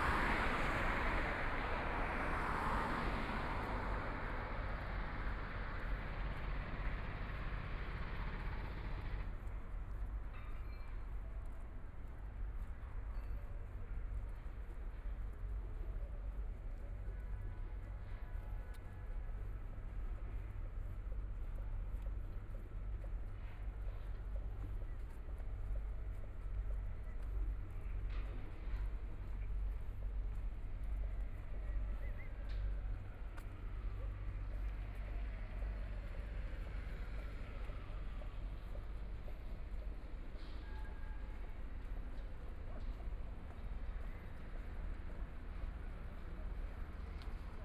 Huangpu District, Shanghai - Walking on the road
Walking on the road, Traffic Sound, Binaural recording, Zoom H6+ Soundman OKM II